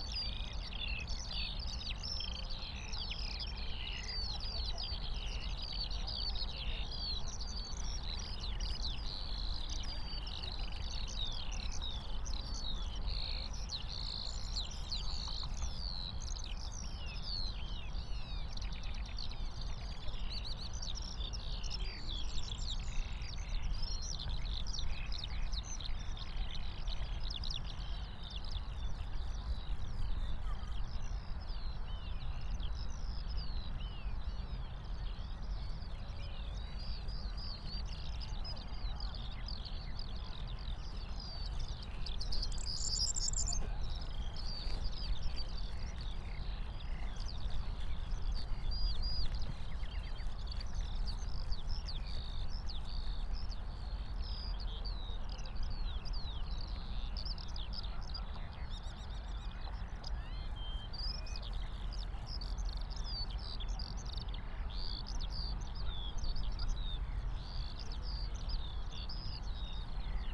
Tempelhofer Feld, Berlin - skylarks and others
sunset, open meadows with high grass, Eurasian skylarks (Alauda arvensis), common kestrel (Falco tinnunculus), corn bunting (Emberiza calandra) among others. People passing-by. Various anthropophonic sounds in the distance.
(SD702, MKH8020)